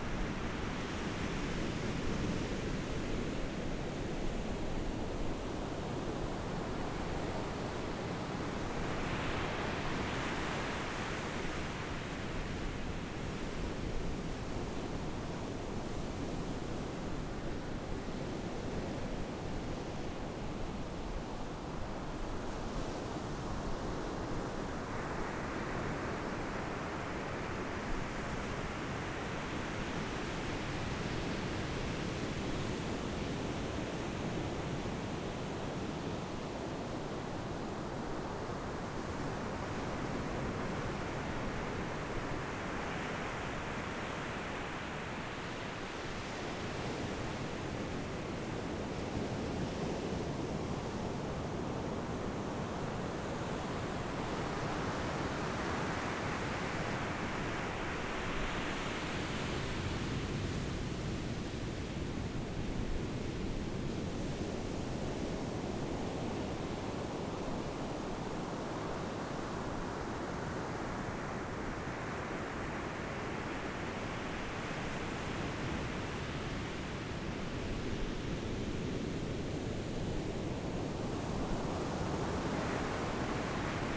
坂里沙灘, Beigan Township - Sound of the waves
Sound of the waves, In the beach, Windy
Zoom H6 XY
福建省, Mainland - Taiwan Border, 13 October 2014